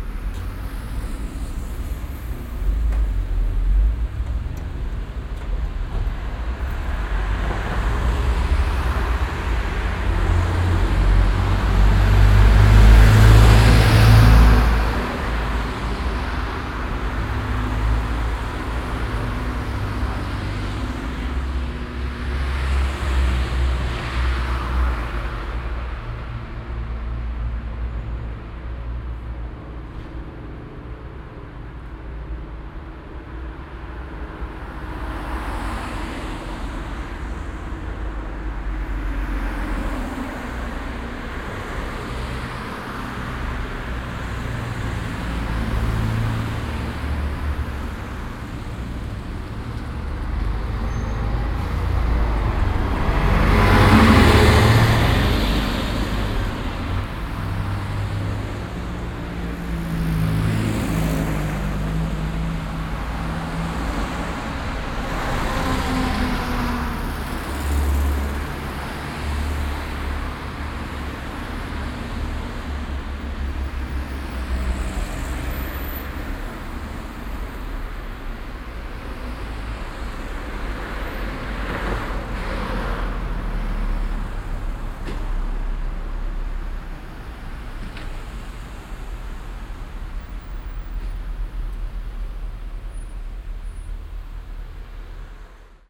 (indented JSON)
{
  "title": "haan, bahnhofstrasse, verkehr, nachmittags",
  "description": "nachmittäglicher strassenverkehr auf der bahnhofstrasse\nproject: social ambiences/ listen to the people - in & outdoor nearfield recordings",
  "latitude": "51.19",
  "longitude": "7.00",
  "altitude": "147",
  "timezone": "GMT+1"
}